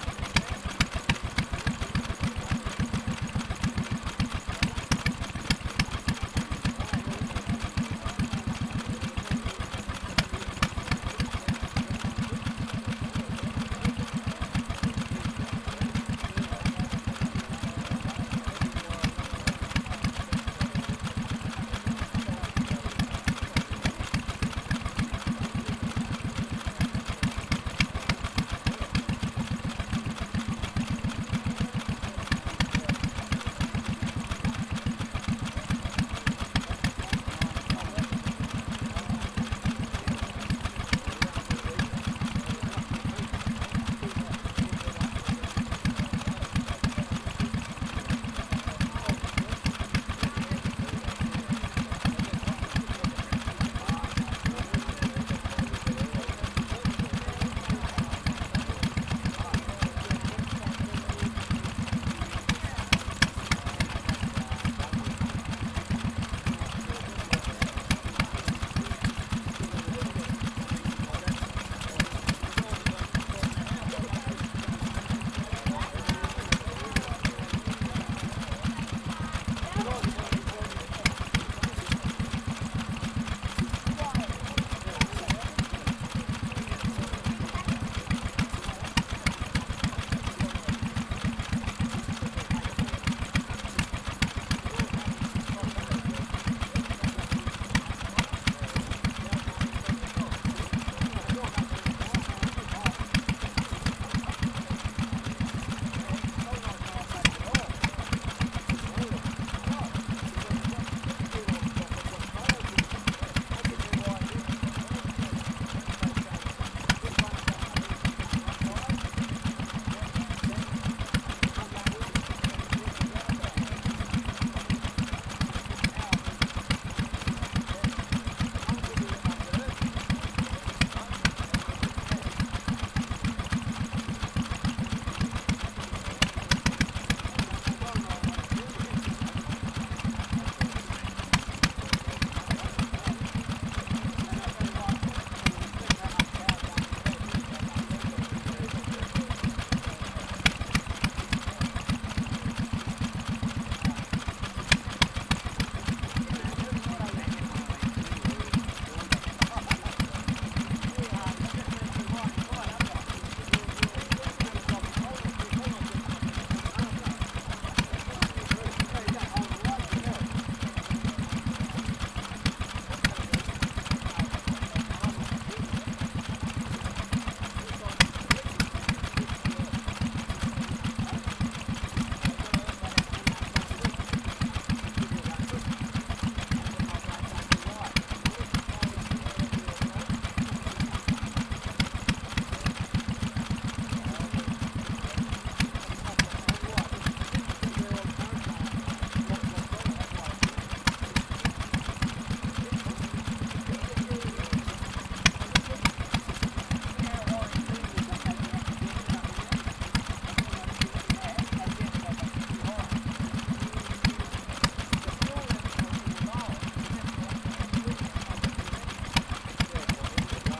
Bodalla NSW, Australia - Bodalla NSW, machine beat
Vintage portable steam and petrol engines on display at school fair.
- of all the machines running, one in particular insisted its musical capability
- owners wander about the machines: starting, stopping & adjusting